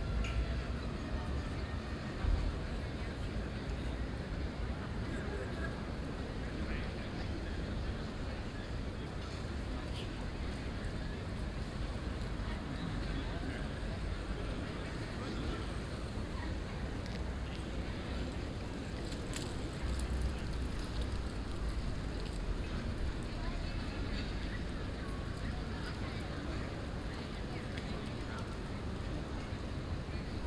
Voorhout, Den Haag, Nederland - Plein
A sunny Saturday in October; People on café terraces, kids playing and one loud motorcycle.
Binaural recording.